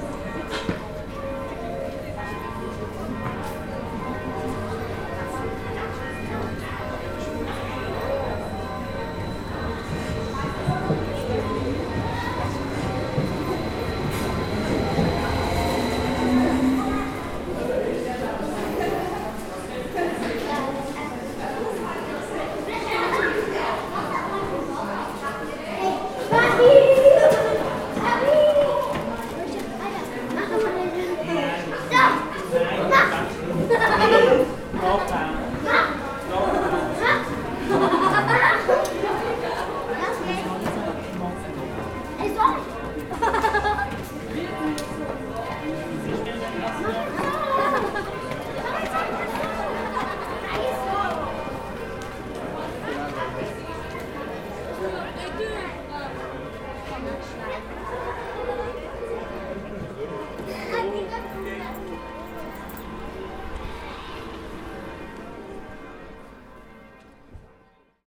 Zugankunft Grellingen - Zugankunft Grellingen
Zugankunft in Grellingen im Laufental, Birs